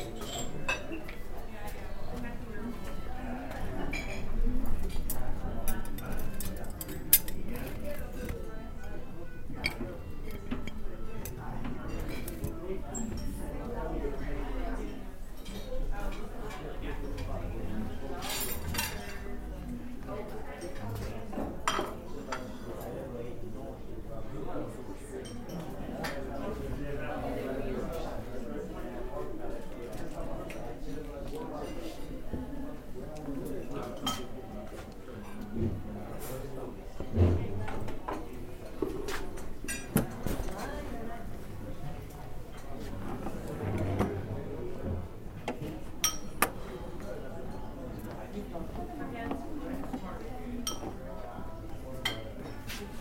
St. Gallen (CH), radisson hotel, breakfast buffet
recorded june 16, 2008. - project: "hasenbrot - a private sound diary"